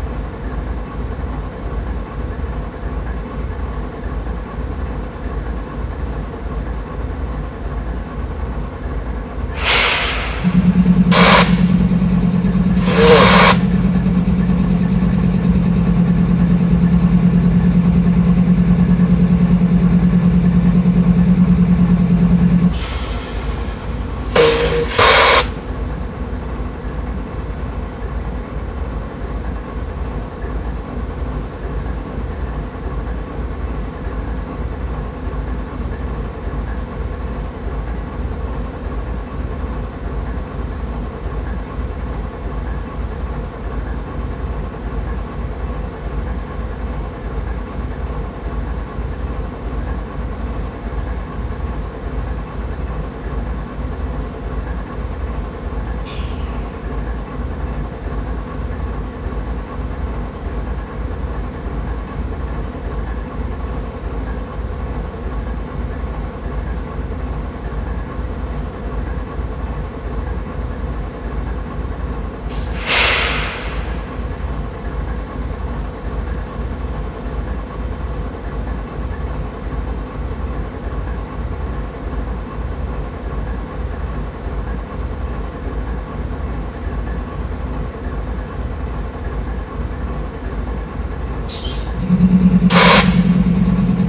Freight Engine, Memorial Park, Houston, TX - Freight Engine
Idling iron beast, doing what it does.
CA14 omnis (spaced)> Sony PCM D50
Harris County, Texas, United States of America